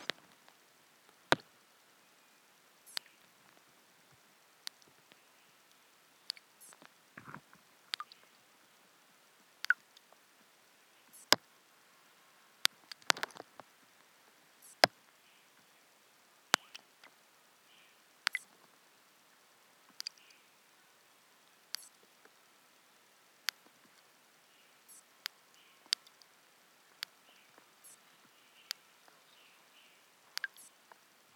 112台灣台北市北投區學園路1號國立臺北藝術大學圖書館 - the sound around the pond
under the water (the water drops falling down into the pond)
Taipei City, Taiwan